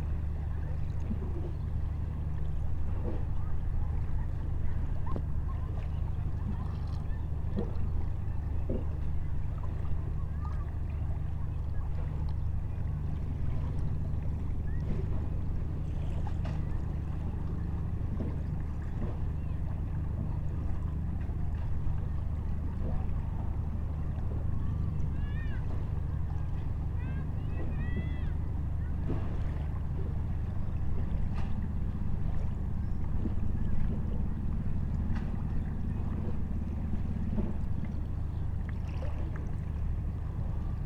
Kos, Greece, at sea - Kos, Greece, at sea level
17 April